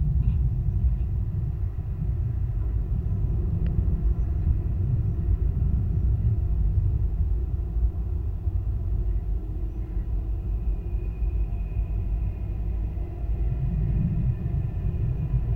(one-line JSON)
{"title": "Kaliningrad, Russia, metallic bridge", "date": "2019-06-08 20:00:00", "description": "contact microphones on abandoned bridge, low frequencies", "latitude": "54.71", "longitude": "20.50", "altitude": "4", "timezone": "Europe/Kaliningrad"}